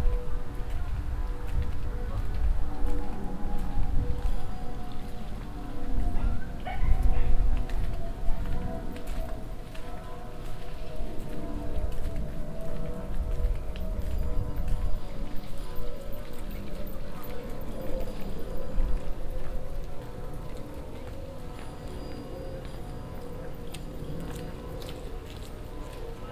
walking in the morning time along the keizergracht channel in the direction of the church morning bells
international city scapes - social ambiences and topographic field recordings